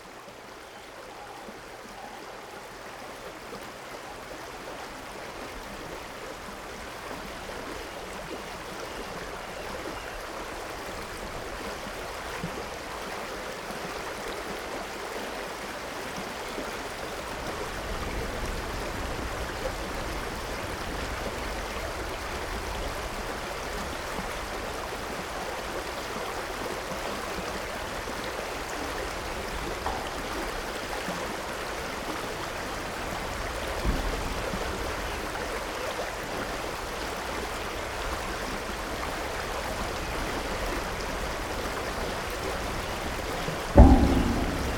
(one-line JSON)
{"title": "Gateshead District, UK - BlackhallMill Bridge DerwentReduxFrankKojayProject 010517 1615", "date": "2017-05-01 16:15:00", "description": "Frank Kojay's \"The Derwent Vale\" is a hand written book and collage work bequested to Gateshead Library Archive with explicit instructions that it never be reprinted - to see the book you have to visit the archive.\nThis project takes inspiration from the places described and illustrated in the book and seeks to map these out using the following methods: revisiting and making audio field recordings, photographs and images using eye tracking technology at these locations along the River Derwent.\n(Project by Ben Freeth and Gateshead Arts Development Team).\nSennheiser 416 shotgun mic and rycote wind shield + H4n recorder", "latitude": "54.91", "longitude": "-1.82", "altitude": "56", "timezone": "Europe/London"}